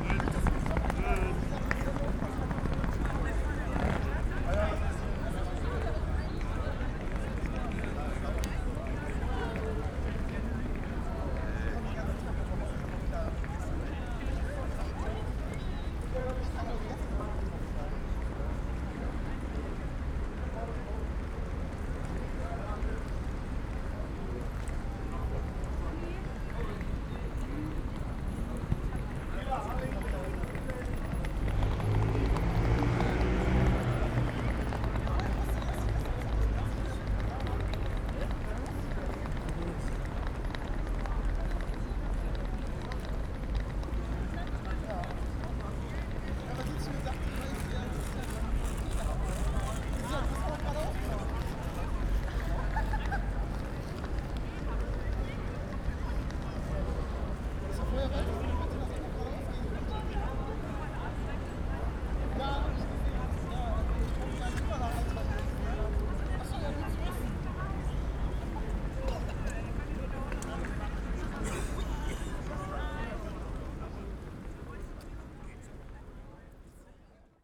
Hbf Bremen - square ambience
Bremen Hbf, main station, Sunday evening ambience on square
(Sony PCM D50, DPA4060)
Bremen, Germany, 14 September 2014